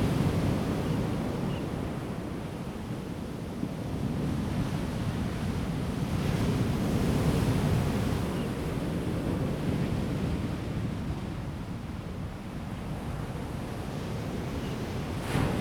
Nantian Coast Water Park, 台東縣達仁鄉 - On the coast
On the coast, Chicken crowing, Bird cry, Sound of the waves
Zoom H2n MS+XY
Taitung County, Daren Township, 台26線, 28 March 2018, ~08:00